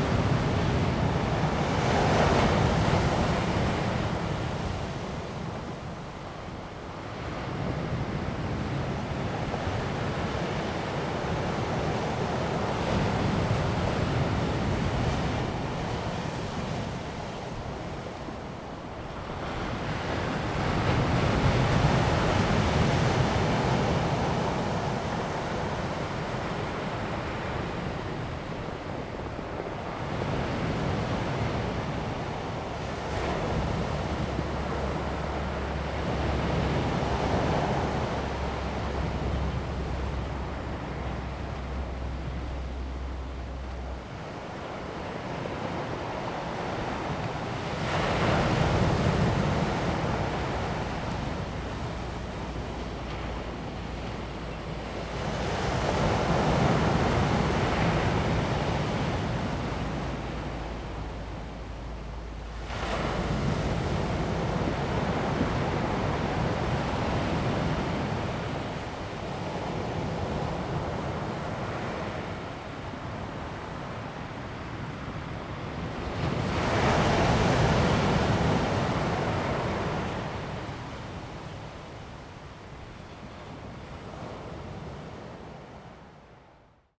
南迴公路南興, Dawu Township - the waves
Sound of the waves, birds sound
Zoom H2N MS+ XY
Taitung County, Taiwan, 24 April 2018